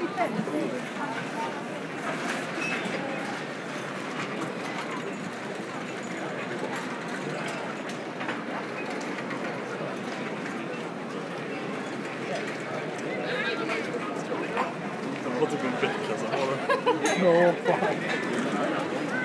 Making a short walk on one of the main square of Malmö, sound of the restaurants, during a very shiny day off.
Malmö, Sweden